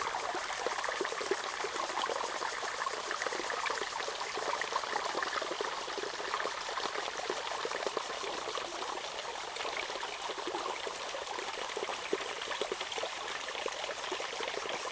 成功里, Puli Township, Nantou County - stream
Small streams, In the middle of a small stream
Zoom H2n MS+ XY+Spatial audio